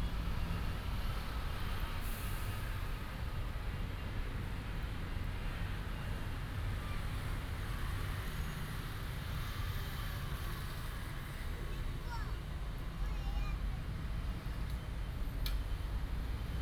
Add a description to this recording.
in the Park, Child, Children's play area, Traffic sound